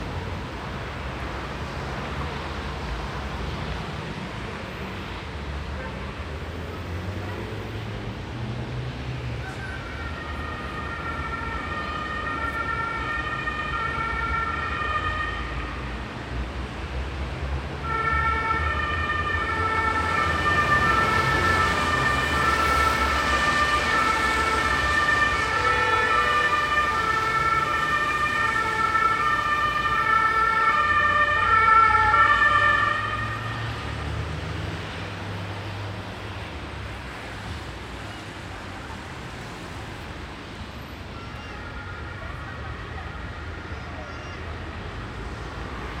Nauener Platz, Wedding, Berlin, Deutschland - 2013-01-03 Nauener Platz, Berlin - Noise Barrier
Nauener Platz in Berlin was recently remodeled and reconstructed by urban planners and acousticians in order to improve its ambiance – with special regard to its sonic properties. One of the outcomes of this project is a middle-sized noise barrier (gabion wall) reducing the crossroads’ traffic noise on the playground by 3 dB. Starting below the noise barrier, I lifted the microphone over the wall while recording and dropped it below again.
Berlin, Germany